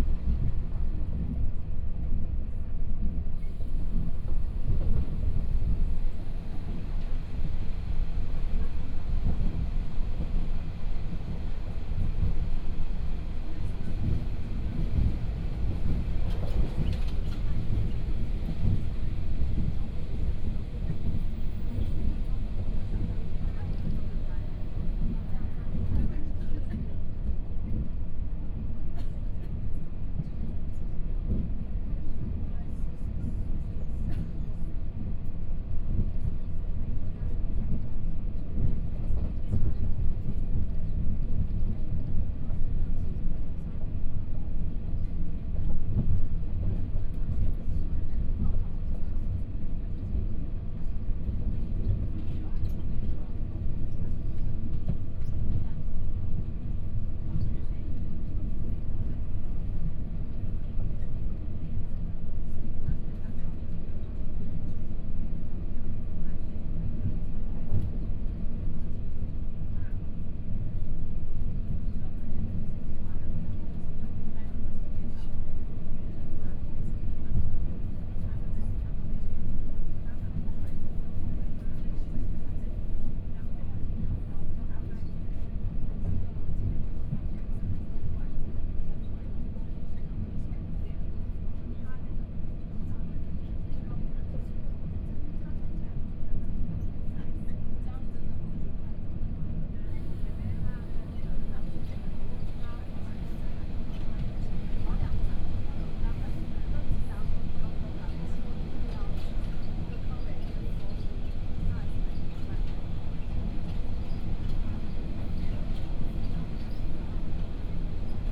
Guanshan Township, Taitung County - Taroko Express
Interior of the train, from Ruiyuan Station to Guanshan Station, Binaural recordings, Zoom H4n+ Soundman OKM II
Guanshan Township, Taitung County, Taiwan, 18 January 2014